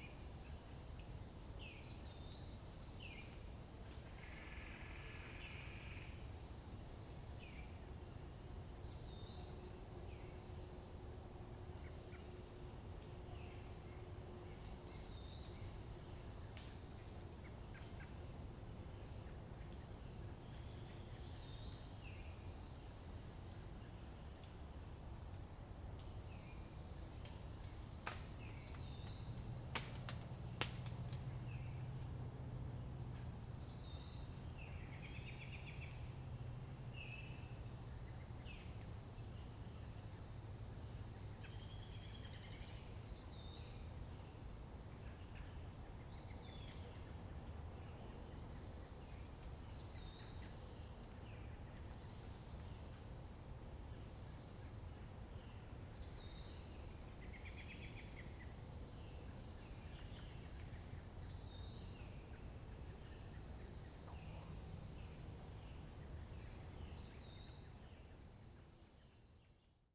Owl Creek Trail, Queeny Park, St. Louis, Missouri, USA - Under the Pawpaw
Evening recording in a pawpaw patch adjacent to the Owl Creek Trail
Missouri, United States